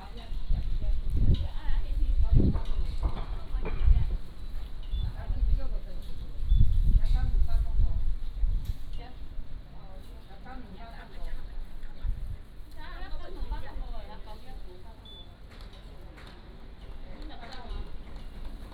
案山里, Magong City - In the temple square
In the temple square, Wind, Birds singing